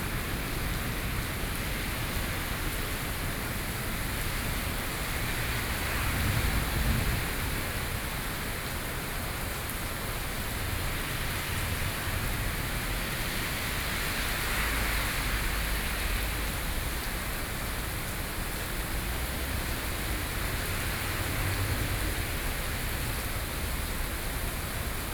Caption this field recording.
Thunderstorm, Sony PCM D50 + Soundman OKM II